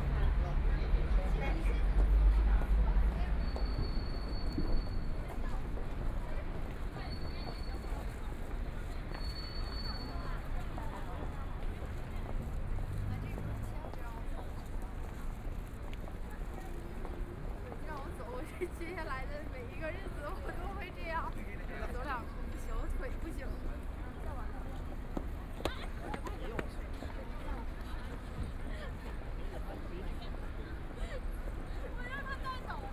The crowd, Bicycle brake sound, Trumpet, Brakes sound, Footsteps, Traffic Sound, Binaural recording, Zoom H6+ Soundman OKM II
25 November 2013, ~16:00